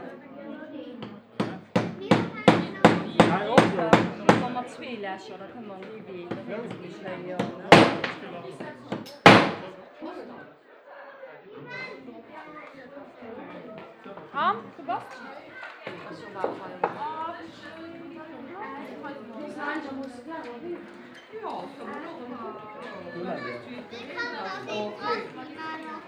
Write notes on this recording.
Auf dem Sommer-Familienfest des Naturpark Hauses an einem Schmiedestand. Der Klang des Metallhämmerns und diversen Stimmen. At the summer family fair of the nature park house inmside a blacksmith tent The sound of forging small metal plates and several voices.